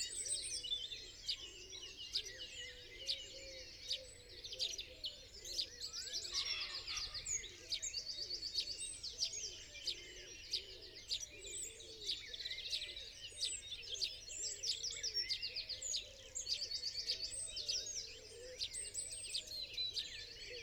Unnamed Road, Malton, UK - dawn chorus ... 2020:05:01 ... 04.17 ...
dawn chorus ... from a bush ... dpa 4060s to Zoom H5 ... mics clipped to twigs ... bird song ... calls ... from ... blackbird ... robin ... wren ... tawny owl ... blackcap ... song thrush ... pheasant ... great tit ... blue tit ... dunnock ... tree sparrow ... collared dove ... wood pigeon ... some traffic ... quiet skies ...